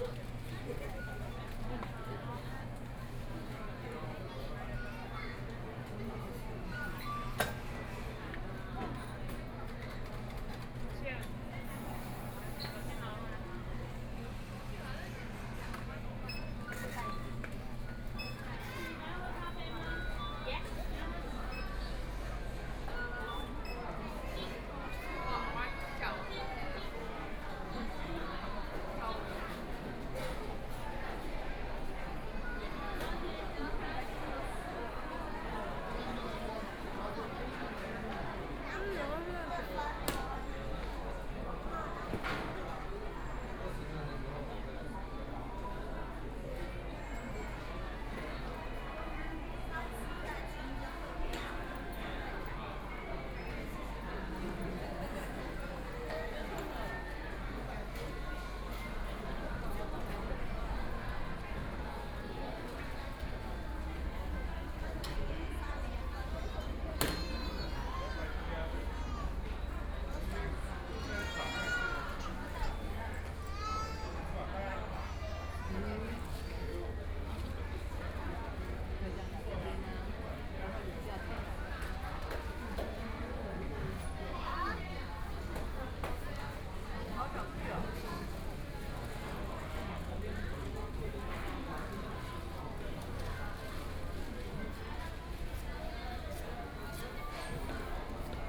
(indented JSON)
{
  "title": "Hualien Station, Taiwan - Station hall",
  "date": "2014-01-18 14:24:00",
  "description": "in the Station hall, Binaural recordings, Zoom H4n+ Soundman OKM II",
  "latitude": "23.99",
  "longitude": "121.60",
  "timezone": "Asia/Taipei"
}